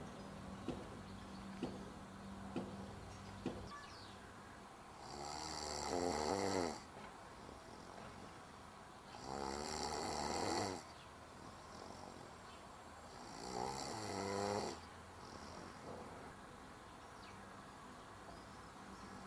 Aytré, France - sieste sous le figuier
boulevard de la mer
16 July